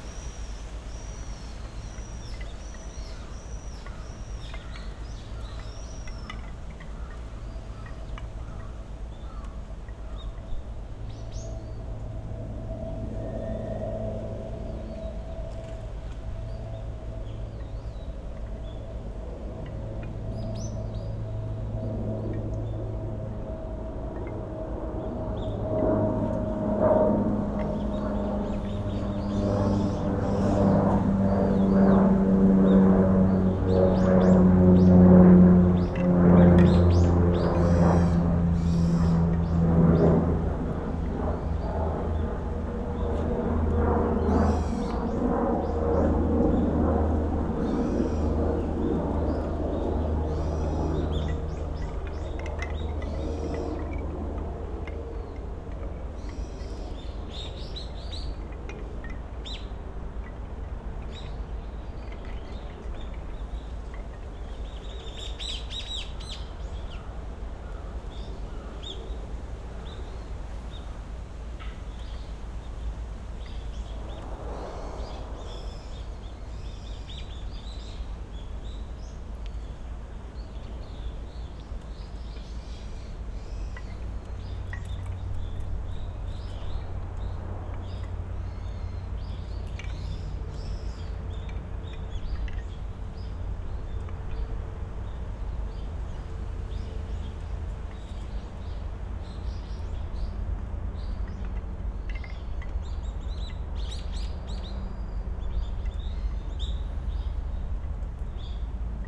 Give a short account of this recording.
At Takano Shrine in Ritto City, Shiga Prefecture, Japan, we can hear wind blowing through high trees in the sacred grove; noisy aircraft, traffic, and other human sounds; several species of birds; and the clatter of wooden prayer tablets that hang near the main sanctuary.